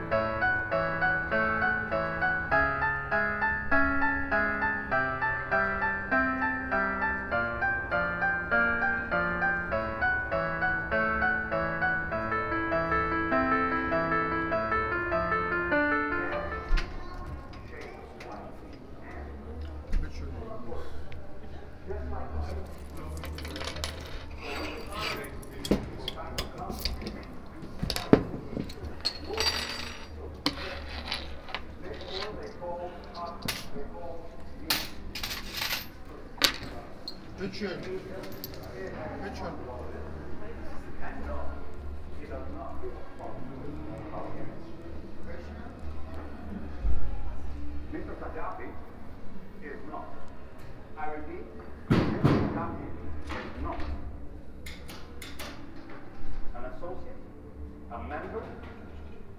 {"title": "HKW, Tiergarten, Berlin, Germany - bittschoen mistergaddafi", "date": "2013-03-23 14:15:00", "description": "a pass by FORMER WEST, a conference and exhibition in the HKW", "latitude": "52.52", "longitude": "13.36", "altitude": "32", "timezone": "Europe/Berlin"}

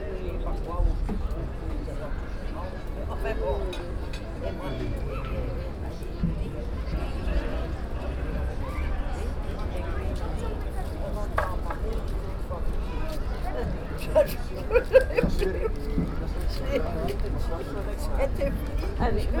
{"title": "Oostende, Edith Cavellstraat", "date": "2008-05-11 15:18:00", "description": "Old ladies talking.", "latitude": "51.22", "longitude": "2.92", "altitude": "8", "timezone": "Europe/Brussels"}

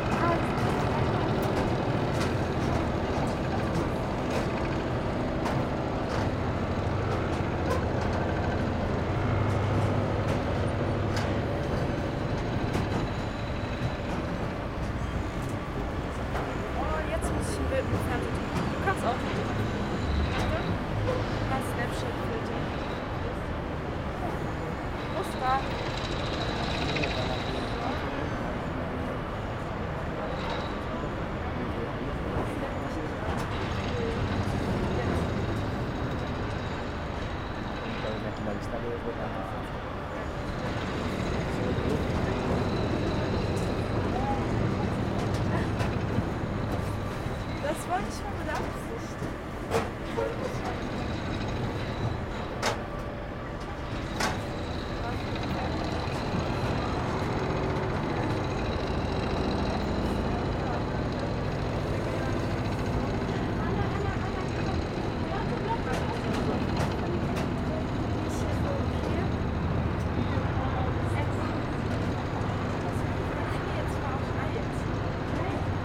{"title": "Am Lustgarten, Berlin, Allemagne - Berliner Dom", "date": "2019-02-28 10:17:00", "description": "On the roof of the Berliner Dom, Zoom H6, MS microphone", "latitude": "52.52", "longitude": "13.40", "altitude": "36", "timezone": "Europe/Berlin"}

